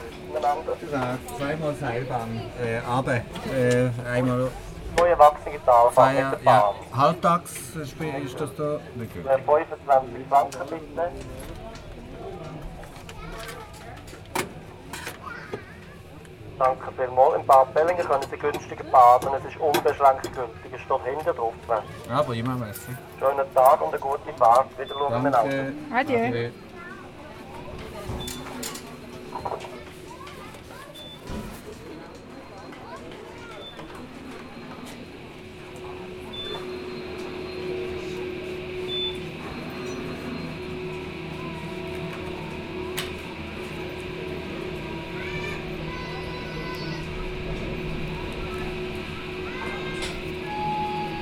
Seilbahn Wasserfallen nach Waldenburg, Einstieg laufend